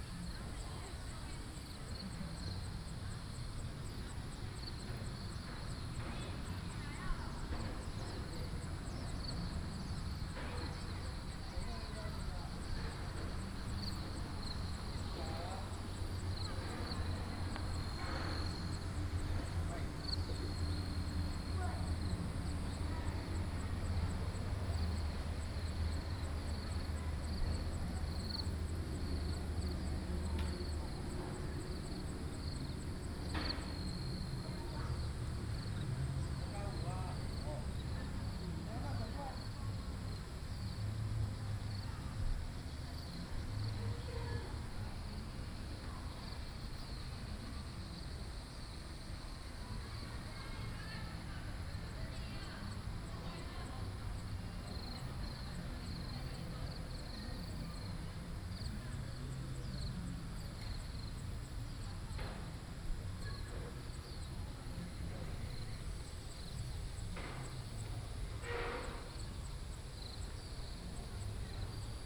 {"title": "桃米紙教堂, 南投縣埔里鎮桃米里 - Insects sounds", "date": "2015-08-11 17:43:00", "description": "Insects sounds, Tourists sound", "latitude": "23.94", "longitude": "120.93", "altitude": "468", "timezone": "Asia/Taipei"}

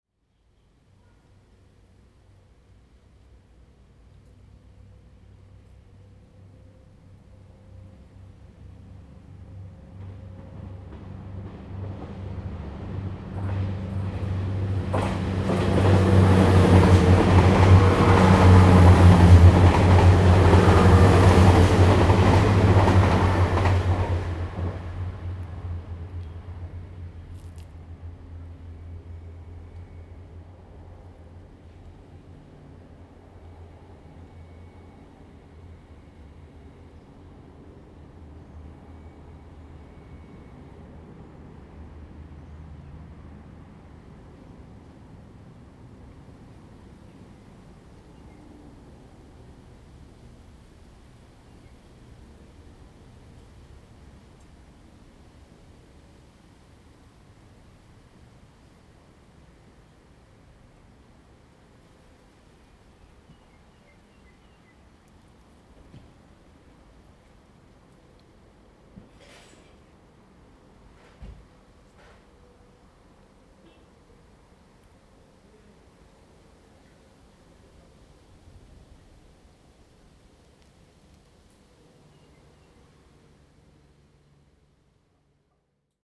{"title": "Nanping Station, Fenglin Township - Small stations", "date": "2014-08-28 13:02:00", "description": "the sound of Train traveling through, Quiet little town, Very hot weather\nZoom H2n MS+XY", "latitude": "23.78", "longitude": "121.46", "altitude": "115", "timezone": "Asia/Taipei"}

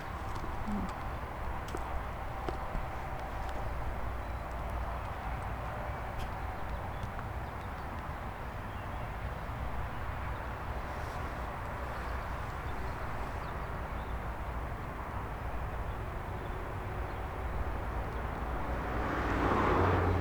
{"title": "Schwäbisch Gmünd, Deutschland - parking area", "date": "2014-05-12 12:09:00", "description": "parkin area nearby HfG Schwäbisch Gmünd und B29", "latitude": "48.79", "longitude": "9.76", "altitude": "303", "timezone": "Europe/Berlin"}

